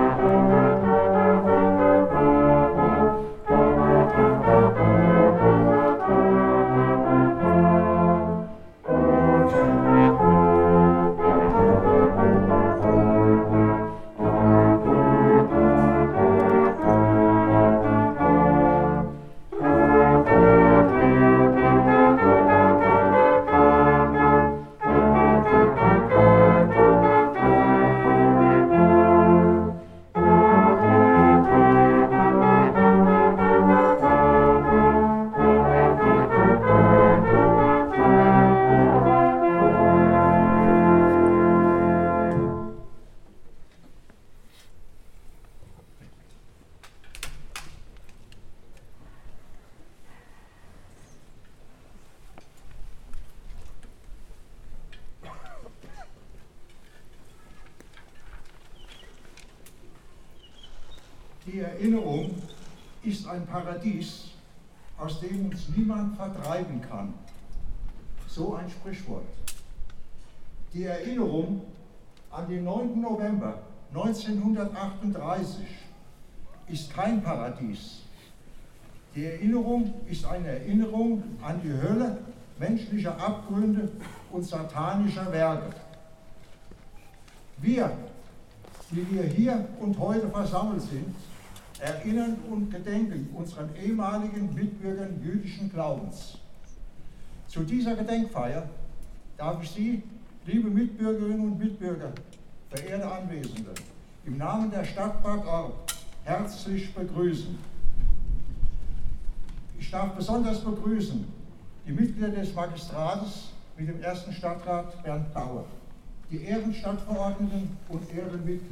Brass band and speeches in front of the former synagoge to commemorate the progrom in 1938 that expelled the jews from the small town Bad Orb, this year with a reflection on the World War One. Part one.
Recorded with DR-44WL.
Solpl. 2, 63619 Bad Orb, Deutschland